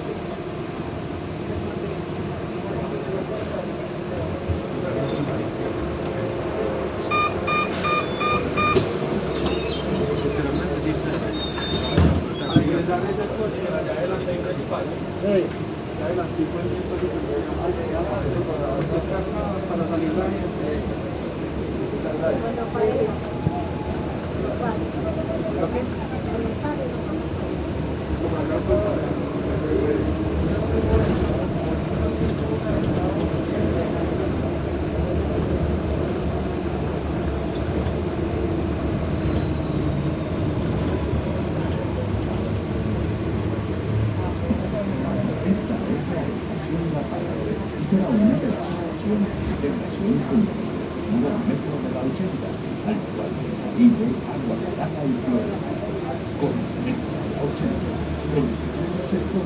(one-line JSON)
{"title": "U de M, Medellín, Antioquia, Colombia - Viaje en metroplus desde estación Udem hasta belén", "date": "2021-11-08 14:07:00", "description": "Sonido ambiente en el metro plus entre las estaciones Udem y Belén.\nCoordenadas: 6°13'50.9\"N+75°36'33.9\"W\nSonido tónico: voces hablando, sonido de motor.\nSeñales sonoras: puertas hidráulicas abriendo, señal de abrir puertas, avisos por altavoces.\nGrabado a la altura de 1.60 metros\nTiempo de audio: 3 minutos con 40 segundos.\nGrabado por Stiven López, Isabel Mendoza, Juan José González y Manuela Gallego con micrófono de celular estéreo.", "latitude": "6.23", "longitude": "-75.61", "altitude": "1561", "timezone": "America/Bogota"}